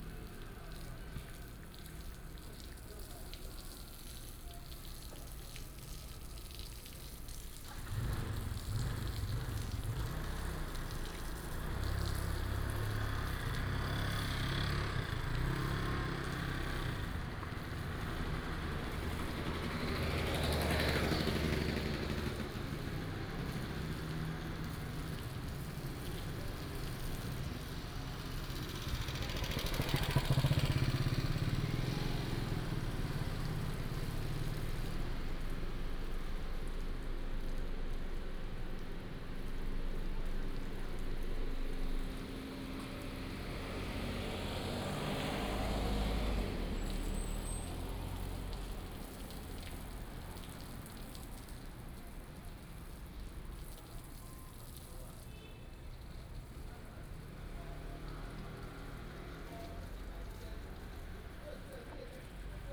Gongqian Rd., Gongguan Township - In front of the temple
traffic sound, In front of the temple, Small village, Binaural recordings, Sony PCM D100+ Soundman OKM II
Miaoli County, Taiwan